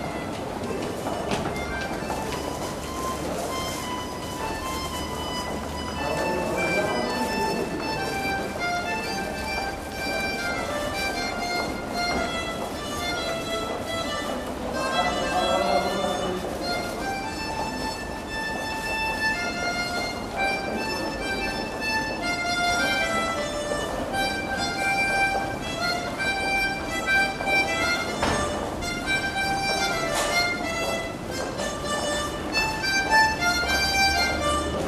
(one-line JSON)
{"title": "Levent metro station, a week of transit, monday morning - Levent metro station, a week of transit, tuesday morning", "date": "2010-09-28 09:40:00", "description": "The ephemeral is even less lasting in the city. But it shows up more often.", "latitude": "41.08", "longitude": "29.01", "altitude": "143", "timezone": "Europe/Berlin"}